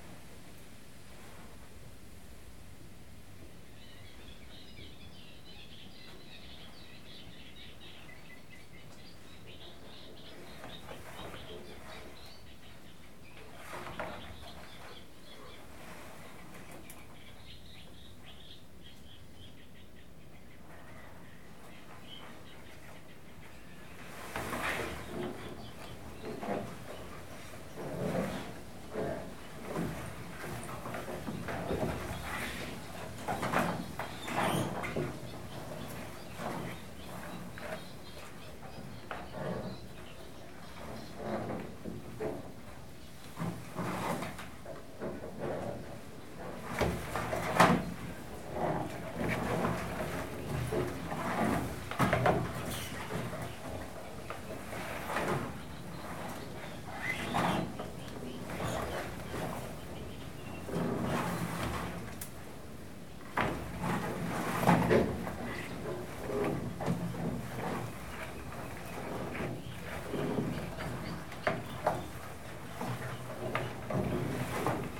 Lac de Rillé, Rillé, France - Willow on tin
In a bird hide (observatoire) under a willow tree, the wind was pushing the willow branches across the corrugated tin roof and wooden sides of the hut. The resulting sound is quite irregular and difficult to visualise.
In the background you can also hear some birds squeaking.
Recorded on a zoom H4n internal mics.